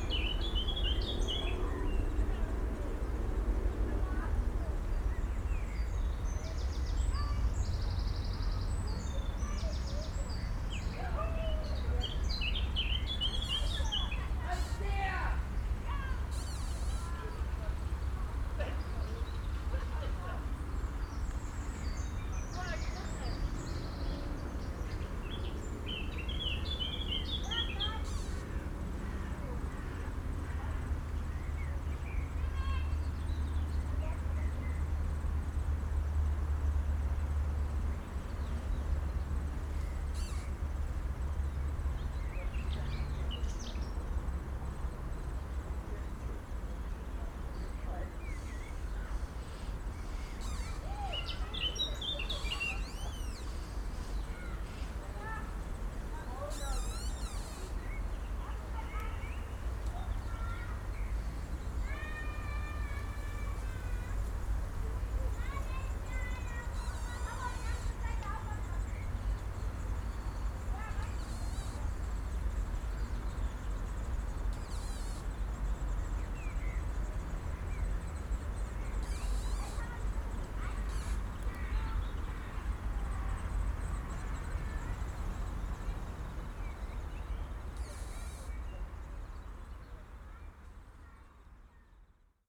kids are playing behind the bushes, a squeaking tree, young birds in a nest. the sources of these sounds are invisible to me.
(SD702, DPA4060)